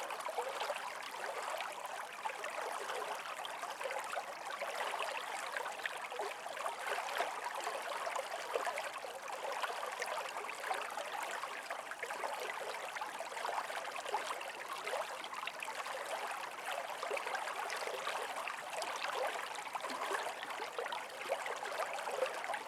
Lithuania, Anyksciai, at Voruta mound
spring stream downhill ancient Voruta mound